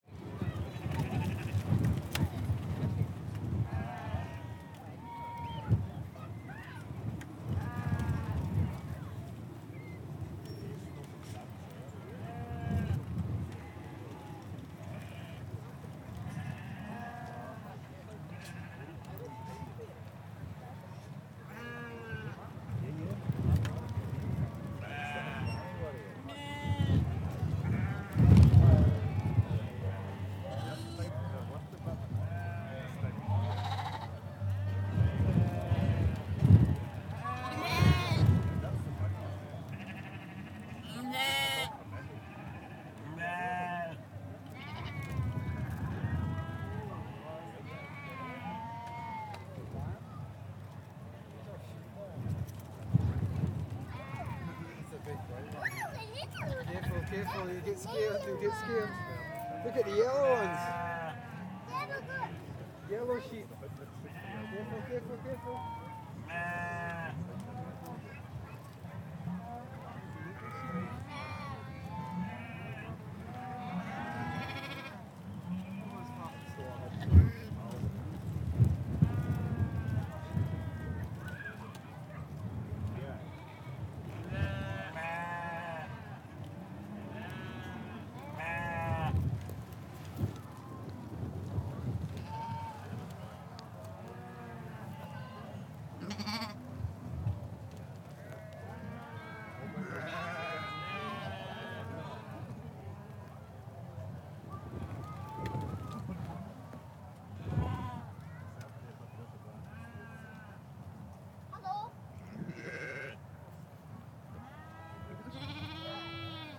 This is the sound of the shetland sheep at the Voe Show in Shetland. Like most of Shetland, this is a treeless region, so there is a lot of wind. Although this makes for a blowy recording, it also means that you can hear rosette ribbons won by different sheep fluttering in the breeze! The sheep are all in pens, and are grouped together as rams, ewes, and lambs. There are three main prizes in each category. Shetland sheep are the backbone of the Shetland wool industry, and - judging by the beautifully stacked and very desirable fleeces just a small distance away in the wool tent - most of the animals in this recording will have their fleeces counted amongst the Shetland wool clip.
Shetland Islands, UK